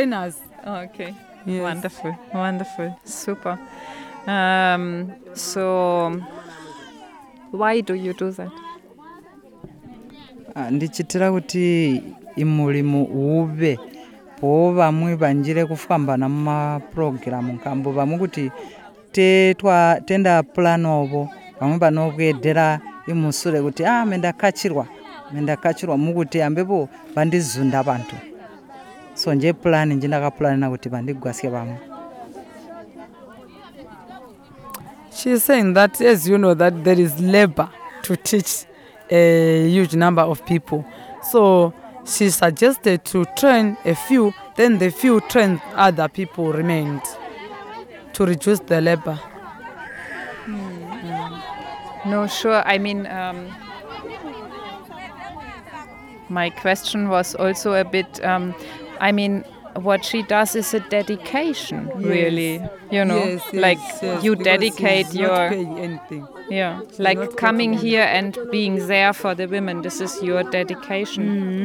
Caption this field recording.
together with Donor Ncube, we talk to Notani Munkuli, an experienced weaver from Bunsiwa. Zubo Trust had sent her and five women from other wards for further training to Lupane Women Centre; now she's passing on her skills; Notani knows a lot about the practice of weaving in this area; what can she tell us about the history of the craft....?